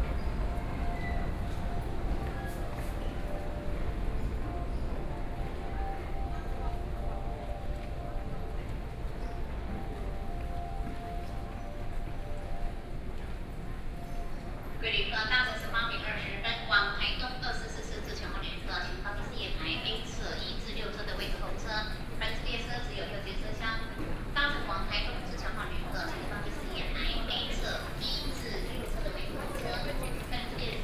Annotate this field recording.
From the station lobby, Then went to the station platform floor entrance, And from the crowd of passengers, Station broadcast messages, Binaural recordings, Sony PCM D50 + Soundman OKM II